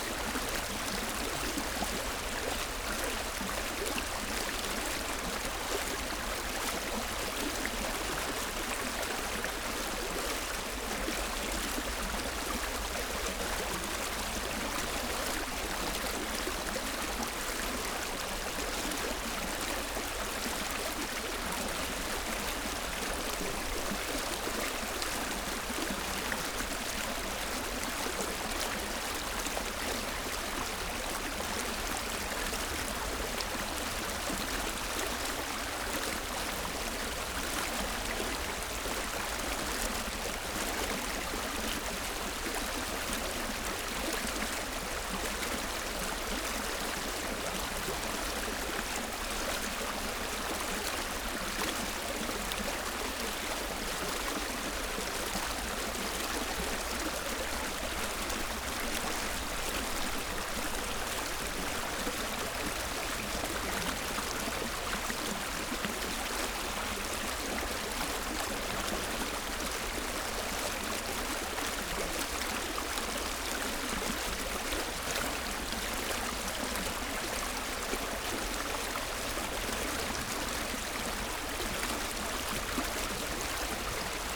Wuhletal, Biesdorf, Berlin - river Wuhle murmuring water flow
Berlin, Wuhletal, river Wuhle murmuring on various levels and frequencies
(SD702, DPA4060)
Berlin, Germany, 16 April